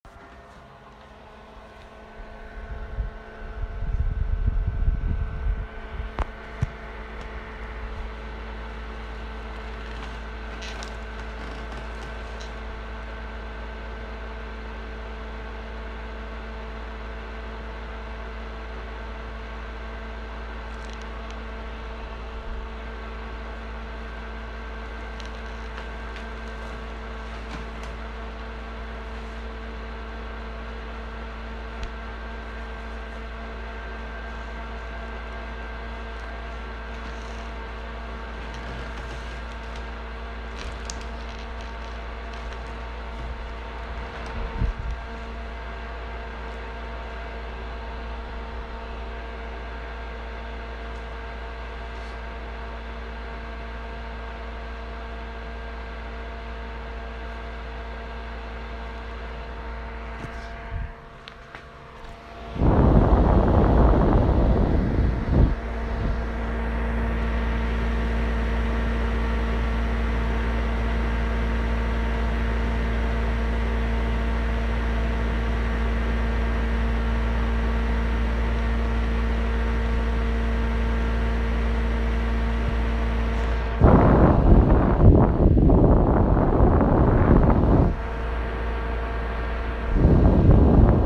a, Cl., Medellín, Antioquia, Colombia - Ambiente Ducto de Ventilación
Información Geoespacial
(latitud: 6.234335, longitud: -75.584978)
Ventilación Edificio Ocarinas
Descripción
Sonido Tónico: Ducto Ventilando
Señal Sonora: Cambios de Frecuencia en la Ventilación
Micrófono dinámico (celular)
Altura: 2,25 m
Duración: 3:02
Luis Miguel Henao
Daniel Zuluaga
Valle de Aburrá, Antioquia, Colombia, October 2021